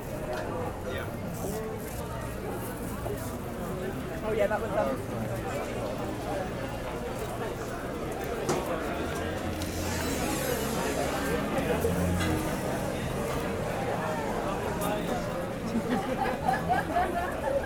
Broadway Market, Hackney, London, UK - Walk Through Broadway Market to Regents Canal
A walk through Broadway Market in Hackney, East London up to Acton's Lock on Regents Canal and back down into the market. Recorded on a Roland hand-held digital recorder (R-05?) with in-built stereo mics.
17 October 2010, ~11:00